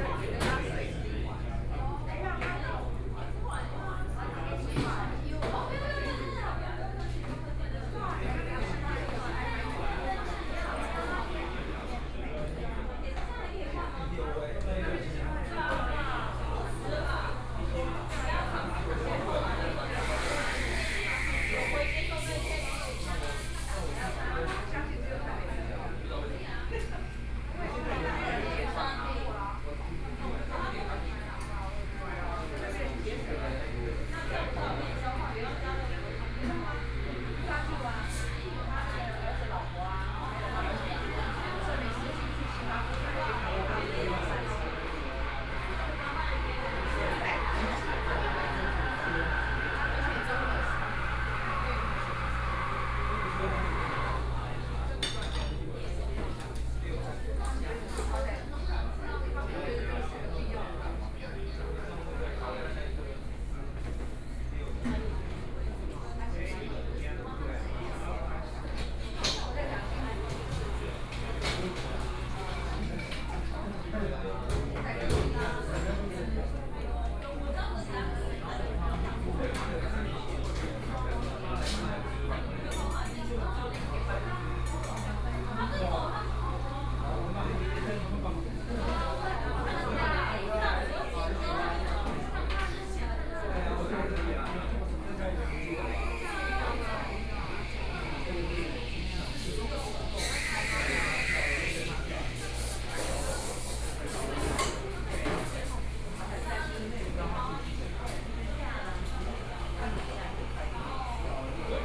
中山區康樂里, Taipei City - Inside the coffee shop
In the coffee shop
Please turn up the volume a little
Binaural recordings, Sony PCM D100 + Soundman OKM II